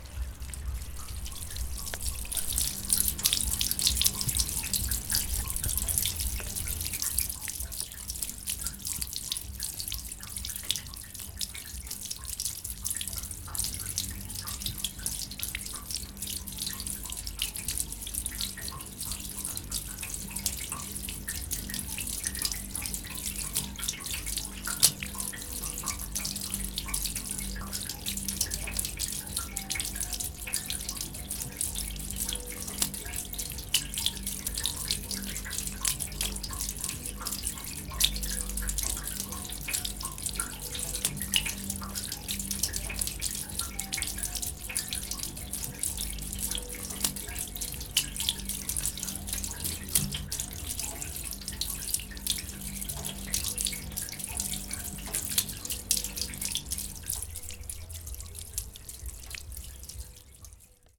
Gießen, Deutschland - Regenrinne Floristenzelt
Early evening, a rainy day. Next to the florist's tent, water trickles from a drain. Recorded with a zoomH4N
Giessen, Germany